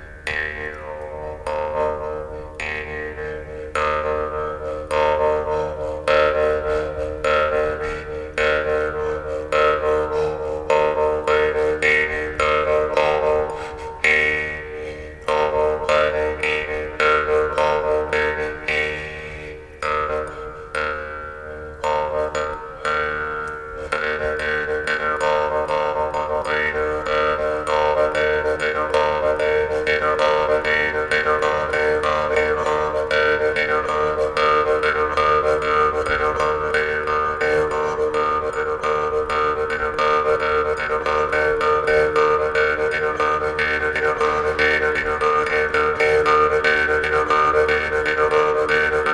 Eglise, Biville, France - Jew's Harp in a church
Jew's Harp in the little church of Biville, Zoom H6 + 4 microphones...